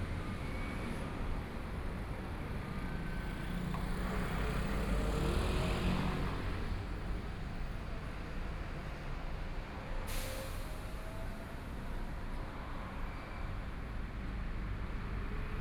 Nong'an St., Taipei City - At intersection
At intersection, the sound of music is Garbage trucks traveling through, Traffic Sound, Binaural recordings, Zoom H4n+ Soundman OKM II
Zhongshan District, Taipei City, Taiwan